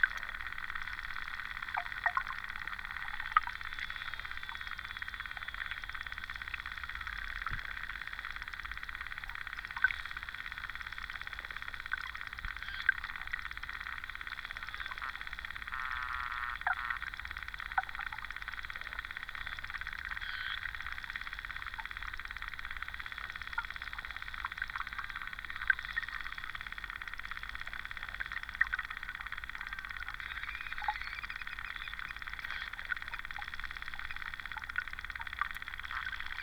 Utena, Lithuania, park river
underwater recordings in city's park
17 September 2015, 11:30